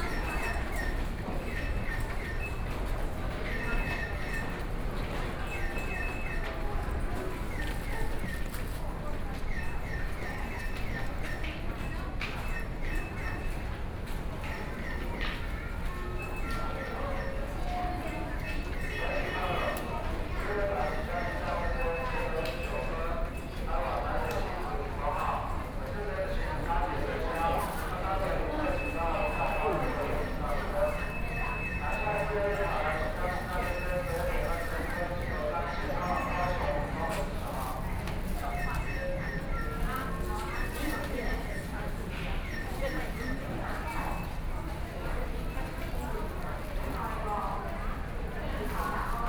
Taoyuan Station - soundwalk
Enter the hall from the station to the station platform, Train arrived, Zoom H4n+ Soundman OKM II
Taoyuan County, Taiwan, 11 September 2013, ~13:00